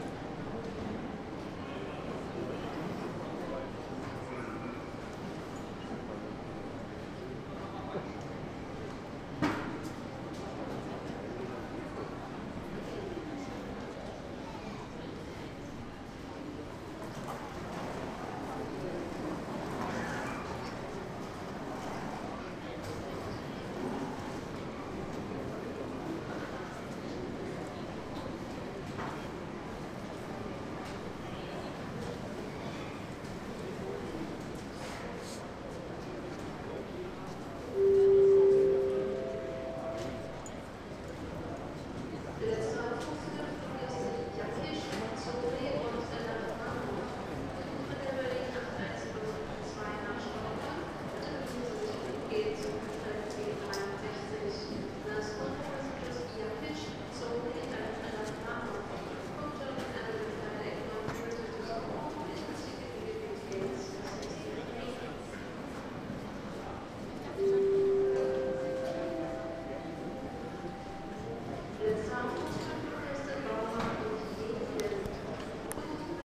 Sushi-Takeaway in der Wartehalle des Heilgenstadt Bahnhofs.